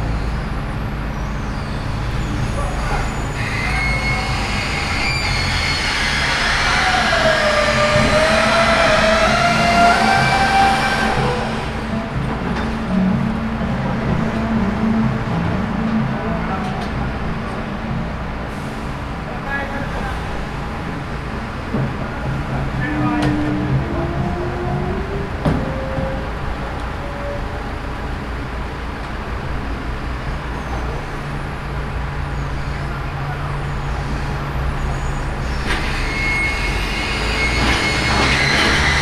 Morning dustmen, Smíchov
Scary sounds woke me up in the morning